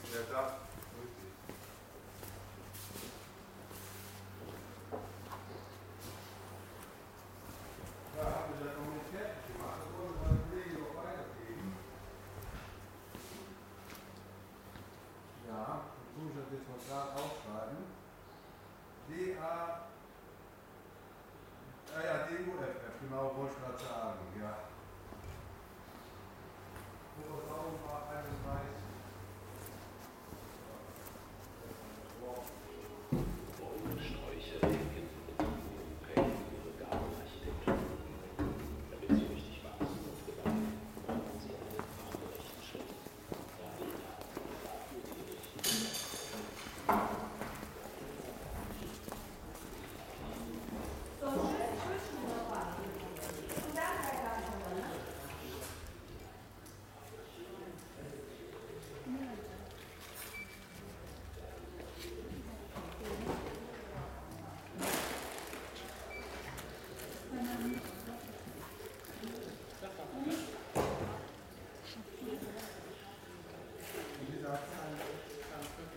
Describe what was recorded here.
recorded july 1st, 2008. project: "hasenbrot - a private sound diary"